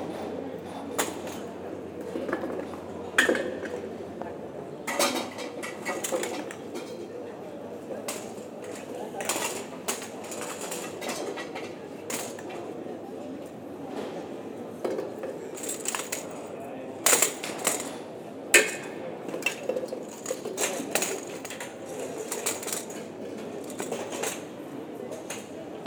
Waiters prepairing the bars terraces for a long sunny saturday afternoon, people discussing, a few sparrows in the trees.